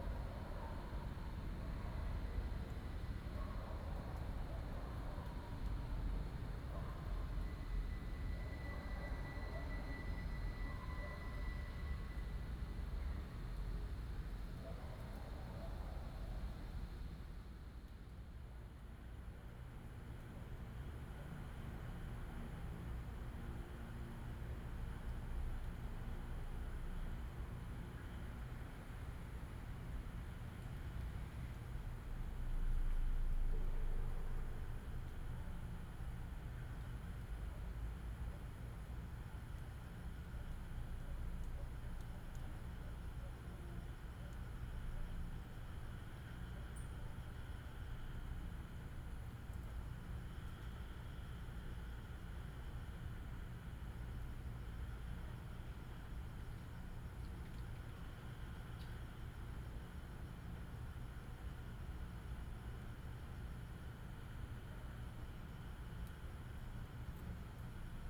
Shin Shing Park, Taipei City - One Day
On July 18 this day, selected a small community park for 24 hours of sound recordings.
Recording mode to record every hour in the park under the environmental sounds about ten minutes to complete one day 24 (times) hours of recording, and then every hour of every ten minutes in length sound, picking them one minute, and finally stick connected 24 times recording sound data, the total length of time will be 24 minutes.Sony PCM D50 + Soundman OKM II, Best with Headphone( For 2013 World Listening Day)